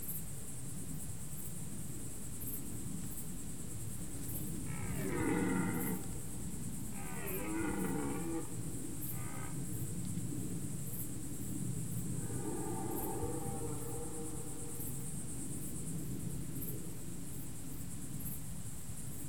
Lokovec, Čepovan, Slovenija - Three deers fighting for the territory 01
Čepovan, Slovenia